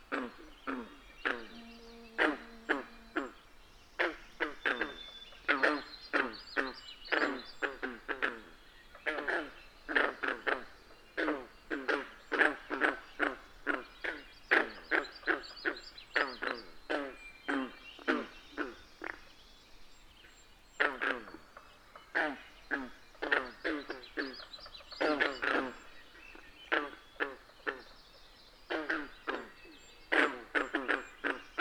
Green Frogs, Ellen Brown Lake Road
Green Frogs and various bird species call and sing before a summer sunrise. Ellen Brown Lake Road, Pictou County Nova Scotia.
World Listening Day
NS, Canada, 18 July